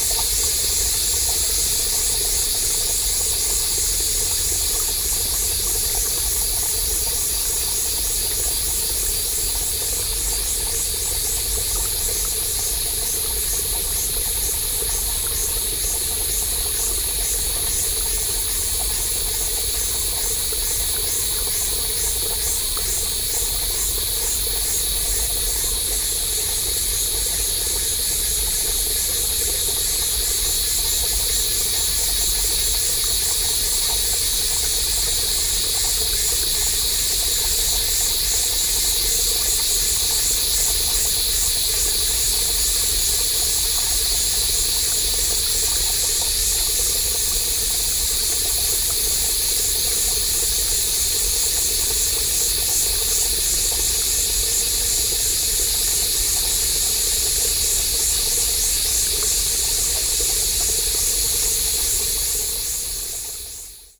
Beitou, Taipei - Morning
Natural ambient sounds of the morning in the mountains Sony PCM D50 + Soundman OKM II